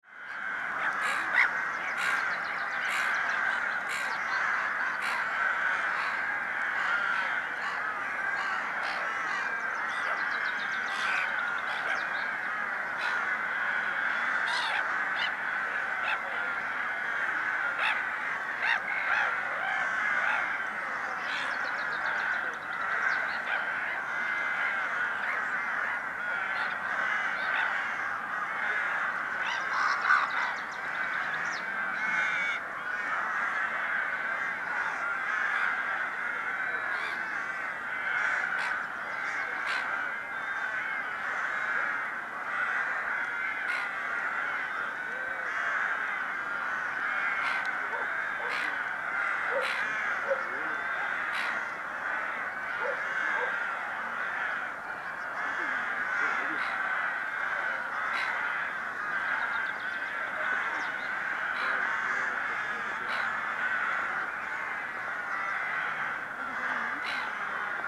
Lithuania, Utena, town birds
crows and gulls and smaller things